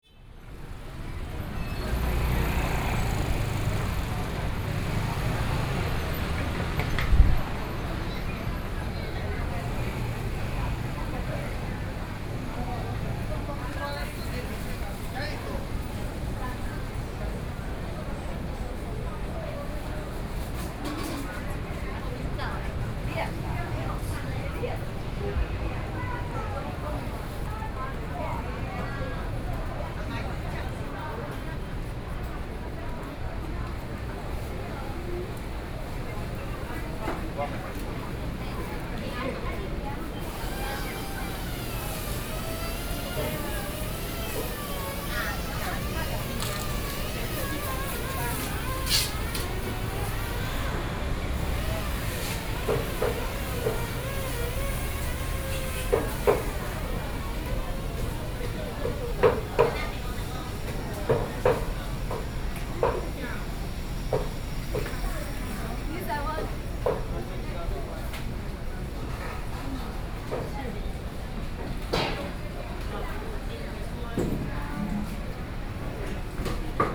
民生市場, Luodong Township - Walking through the traditional market
Walking through the traditional market, Traffic Sound, Indoor market
Sony PCM D50+ Soundman OKM II